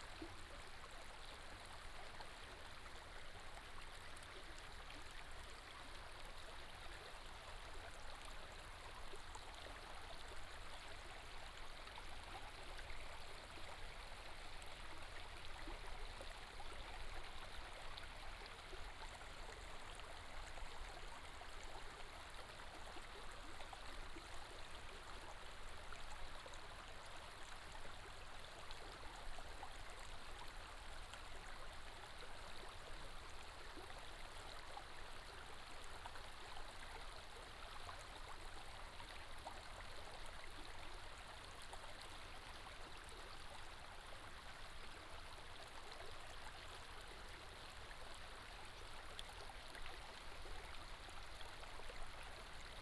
Stream sound
Binaural recordings
Sony PCM D100+ Soundman OKM II